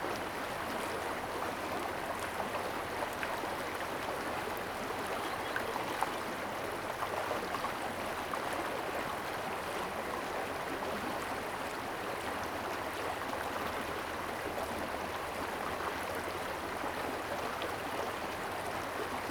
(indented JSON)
{
  "title": "TaoMi River, 桃米里, Puli Township - Stream",
  "date": "2016-04-20 11:03:00",
  "description": "Stream, Bird sounds\nZoom H2n MS+XY",
  "latitude": "23.94",
  "longitude": "120.92",
  "altitude": "474",
  "timezone": "Asia/Taipei"
}